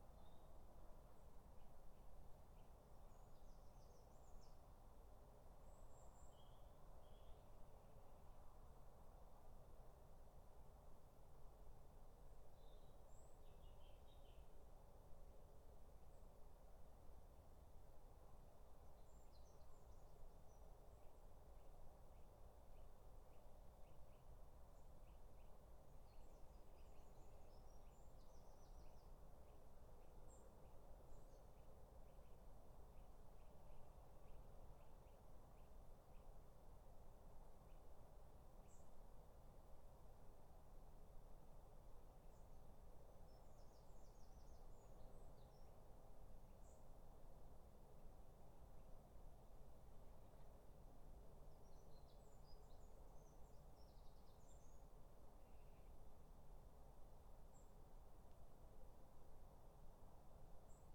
3 minute recording of my back garden recorded on a Yamaha Pocketrak
Dorridge, Solihull, UK, 13 August 2013